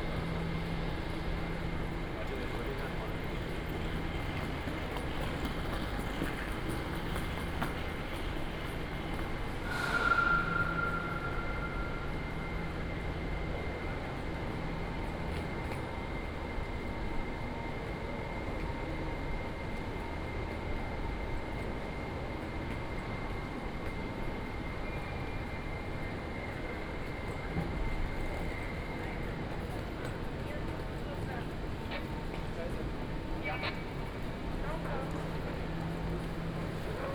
From the beginning the crossroads, Then towards the station, Walking in the station platform, Direction to the station hall, Traffic Sound, Voice traffic lights
Munich, Germany, 11 May 2014